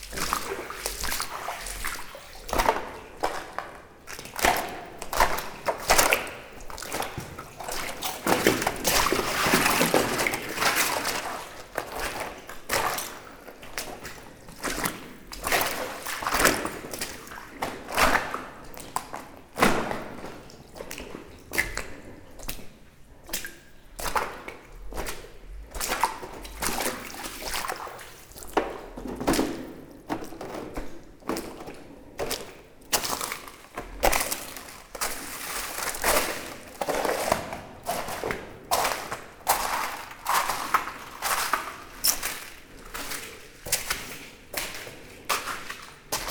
Neufchef, France - Walking in the underground mine
Walking into the underground mine. There's a very strong lack of oxygen in this interesting place. It's difficult for me. At the end of the recording, I'm walking in a ultra-thick layer of calcite.